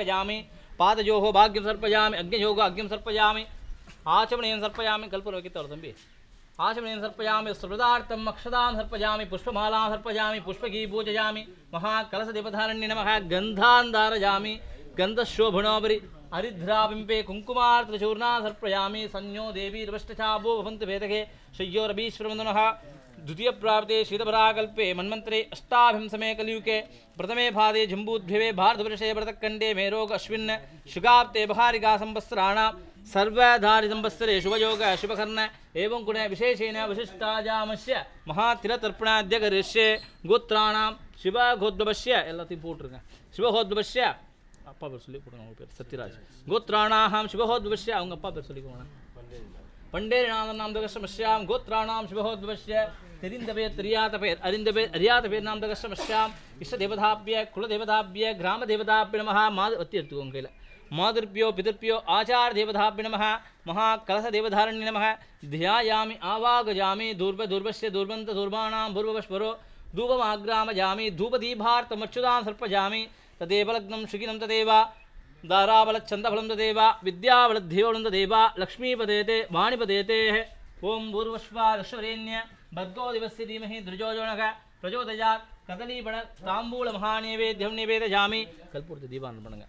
Pondicherry - Shri Vedapuriswarar Temple
Bénédiction
Puducherry, India, 1 May 2008